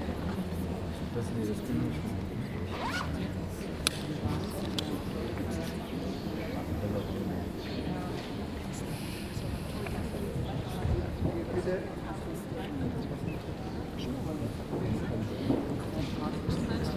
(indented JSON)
{
  "title": "Düsseldorf, theatre, small house - düsseldorf, theatre, small house",
  "date": "2012-01-02 12:09:00",
  "description": "Inside the small house of the Düseldorf Schauspielhaus theatre. The sound of the audience waiting for the play to begin. At the end the sound of the third theatre gong and the closing of the doors.\nsoundmap nrw - social ambiences and topographic field recordings",
  "latitude": "51.23",
  "longitude": "6.78",
  "altitude": "42",
  "timezone": "Europe/Berlin"
}